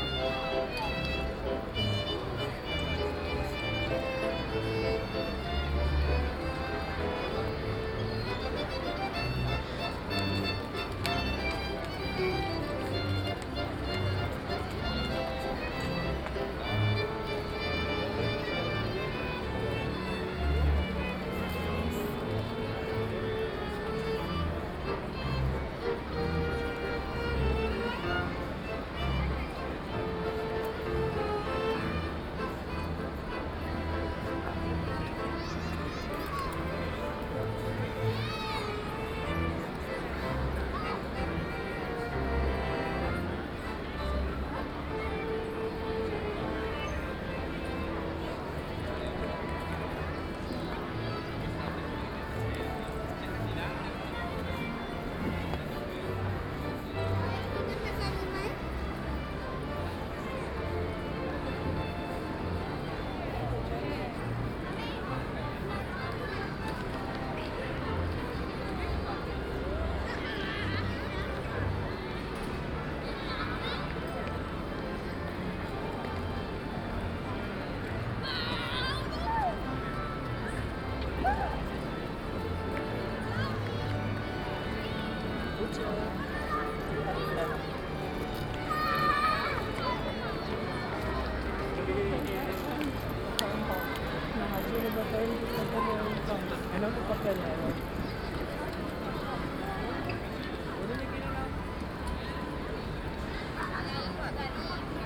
two music ansambles and children at the turistic saturated square